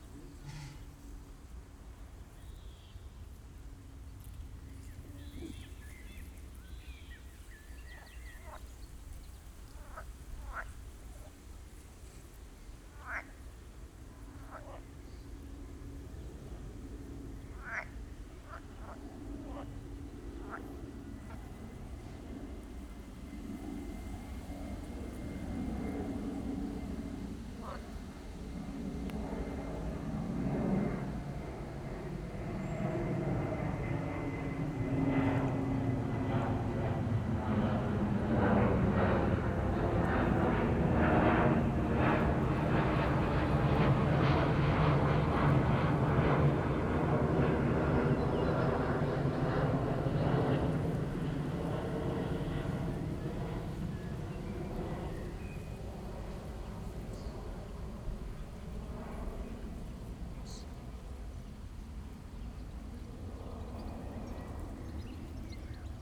Wuhle, Ahrensfelde, Deutschland - residential area, pond ambience
the river Wuhle near its source in Ahrensfelde, just beyond the city border of Berlin. The river is almost invisible here, no flow, just a few wet areas and ponds.
(SD702, DPA4060)
Ahrensfelde, Germany, 23 May 2015, ~16:00